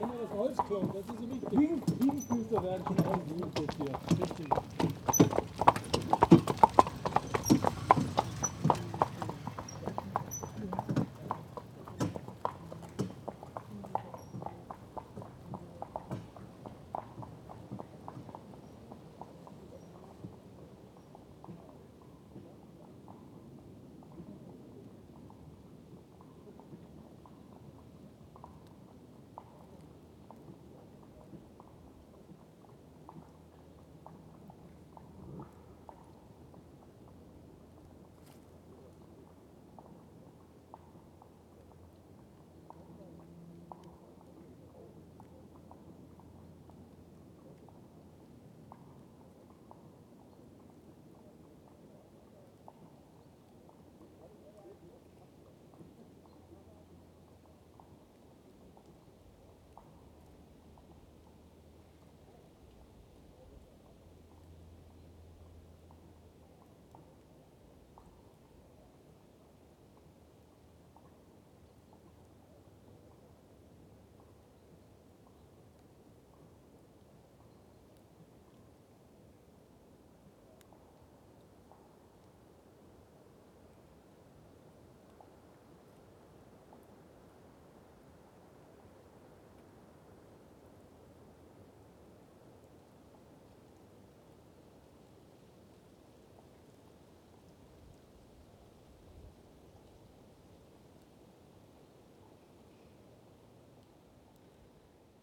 {"title": "Ribbeck, Nauen, Deutschland - Horse-drawn carriage in the forest", "date": "2015-04-05 14:37:00", "description": "Horse-drawn carriage in the forest.\n[Hi-MD-recorder Sony MZ-NH900, Beyerdynamic MCE 82]", "latitude": "52.60", "longitude": "12.74", "altitude": "49", "timezone": "Europe/Berlin"}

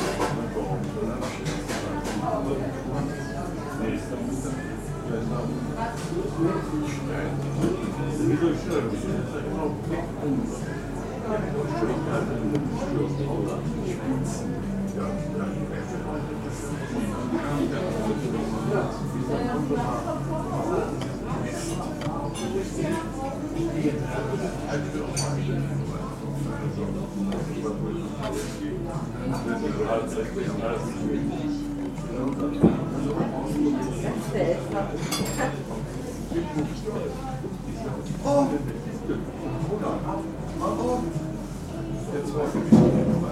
{"title": "Sedansberg, Wuppertal, Deutschland - haus becker", "date": "2011-02-17 20:59:00", "description": "haus becker, möwenstr. 15, 42281 wuppertal", "latitude": "51.28", "longitude": "7.20", "altitude": "202", "timezone": "Europe/Berlin"}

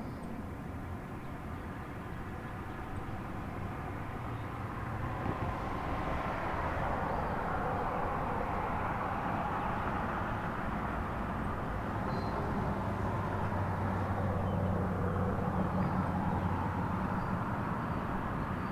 {"title": "Main Street pedestrian bridge crossing the Wabash River, Bluffton, IN, USA - Main Street pedestrian bridge crossing the Wabash River, Bluffton, IN", "date": "2019-04-13 08:30:00", "description": "Sounds recorded from pedestrian bridge crossing the Wabash River, Bluffton, IN", "latitude": "40.74", "longitude": "-85.17", "altitude": "249", "timezone": "America/Indiana/Indianapolis"}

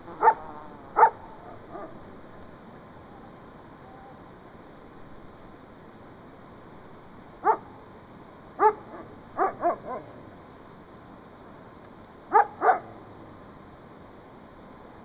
{"title": "Lithuania, Tauragnai, view from the mound", "date": "2012-08-31 15:25:00", "description": "silent village day as heard from Tauragnai mound", "latitude": "55.45", "longitude": "25.87", "altitude": "163", "timezone": "Europe/Vilnius"}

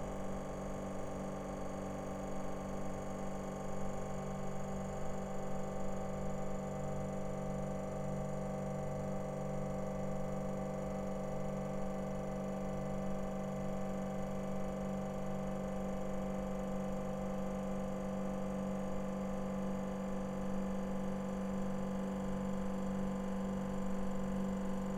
{"title": "Umeå. Sävargården Restaurang. Ventilation ducts", "date": "2011-05-04 21:56:00", "description": "Ventilation ducts sequence", "latitude": "63.83", "longitude": "20.29", "altitude": "59", "timezone": "Europe/Stockholm"}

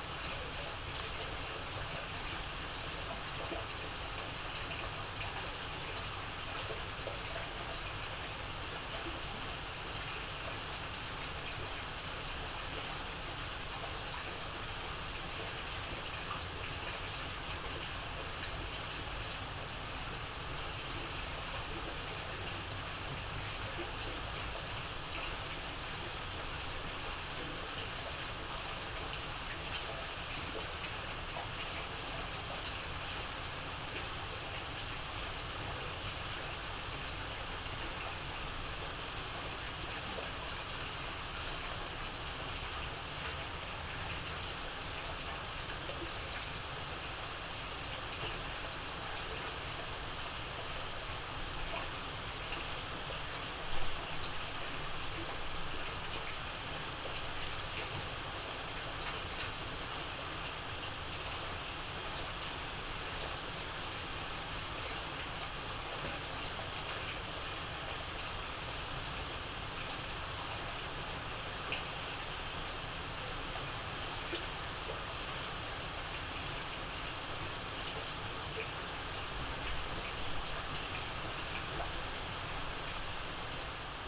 {"title": "Park am Nordbahnhof, Berlin, Germany - regen bei nacht", "date": "2015-05-04 00:23:00", "description": "rain at night, regen bei nacht", "latitude": "52.54", "longitude": "13.38", "altitude": "38", "timezone": "Europe/Berlin"}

